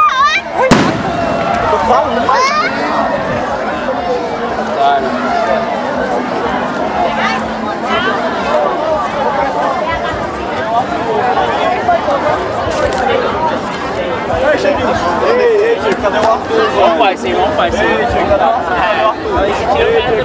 In the beach - Happy New Year!
- Trairi - Ceará, Brazil, 1 January 2013, 01:16